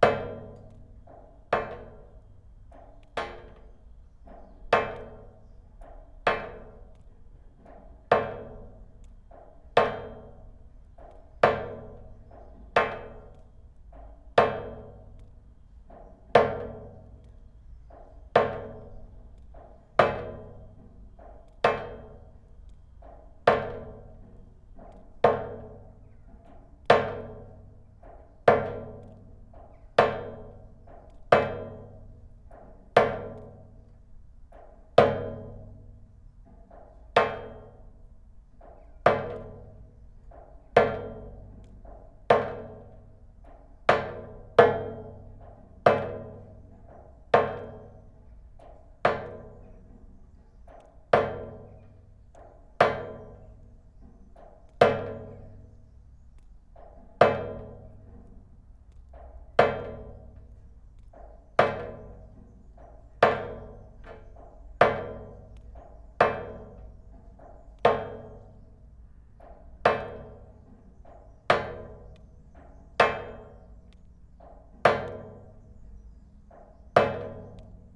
Utena, Lithuania. drops in rainwater pipe
a drizzle is over and drops of water falling in a long rainwater pipe. recorded with two omnis and contact mic